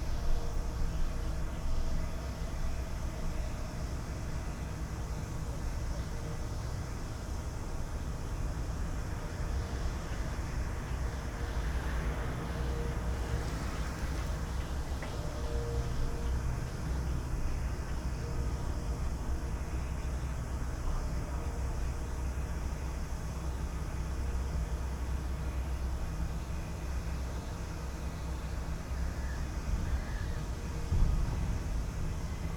trekvlietplein, Den Haag - gas installatie
gas installations hissing with cars, distant bells and city ambience. Soundfield Mic (ORTF decode from Bformat) Binckhorst Mapping Project
Plutostraat, Laak, The Netherlands, 28 February 2012